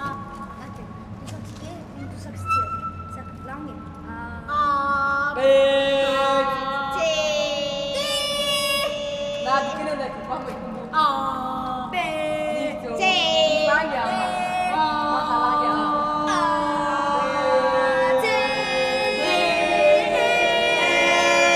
local kids join me as I record the echoes of the concrete space under the Krieau U-Bahn station
Vienna, Austria